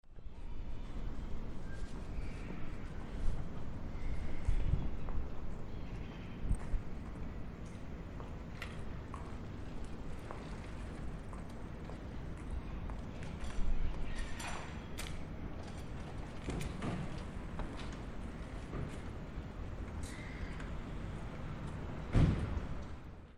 amsterdam, 2009, canal ambience, invisisci